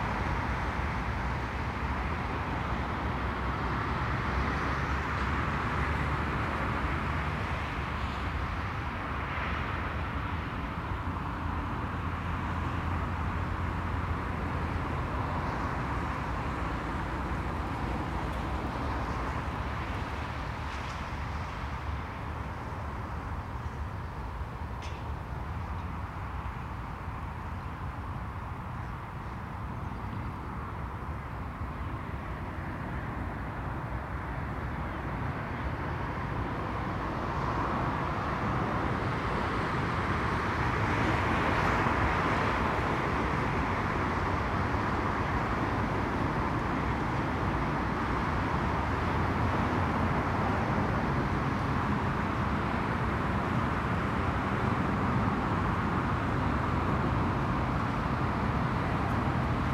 Contención Island Day 24 outer southwest - Walking to the sounds of Contención Island Day 24 Thursday January 28th
The Drive Moor Crescent High Street Grandstand Road
Joggers stepping off into the road
to avoid passing close
Very wet underfoot
A mixed flock of gulls
a low flying flock of geese
presumably Canadas
A flock of redwing
in roadside trees as I return
North East England, England, United Kingdom